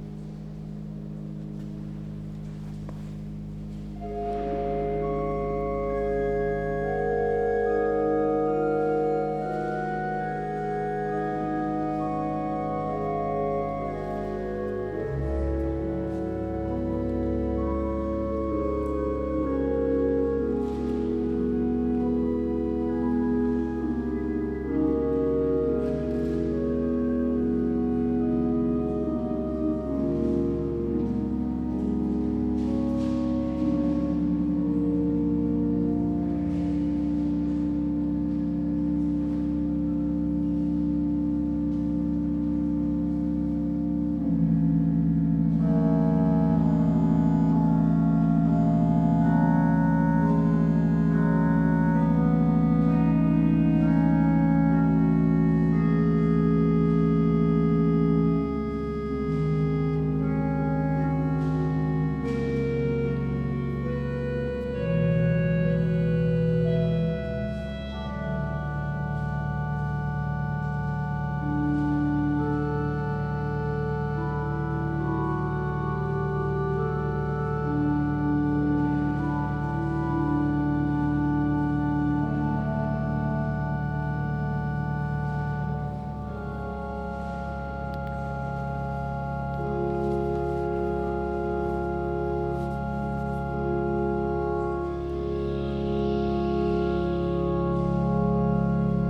Two recordings made on Sunday July 12th 2015 in the Great Church, or Saint Bavo Church, in Haarlem.
Recorded with a Zoom H2. I could not prepare this recording and create a proper set-up; you might hear some noises in the 2nd piece caused by me moving the mic... but I liked the 2nd piece too much to turn this recording down.